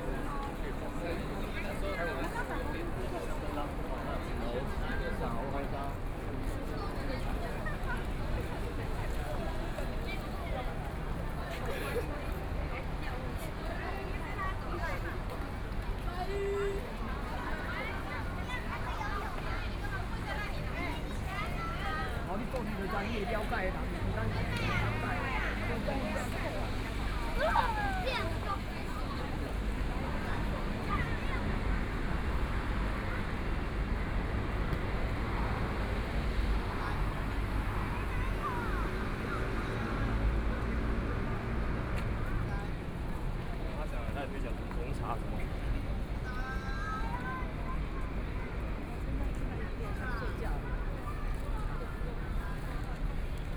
Gongming St., Tamsui Dist. - walking in the Street
Rain inundated the streets, Walking in the night market, Binaural recordings, Sony PCM D50 + Soundman OKM II